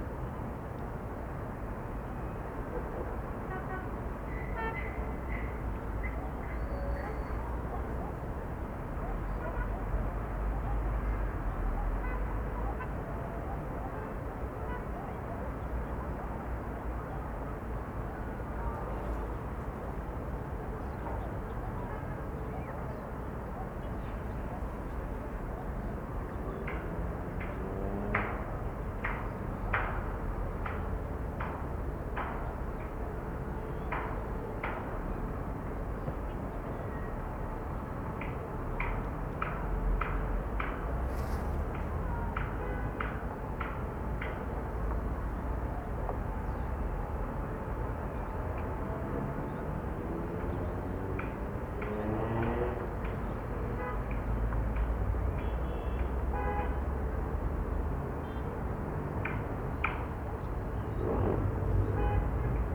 Tvrđava Svetog Ivana, Put do Svetog Ivana, Montenegro - Murmur of Kotor
Murmur of the city, recorded from high point. Banging noise from industrial areas.
Rumeur de la ville, capturé d’un point haut. Bruit d’impacts venant d’un chantier.
29 March 2017, ~18:00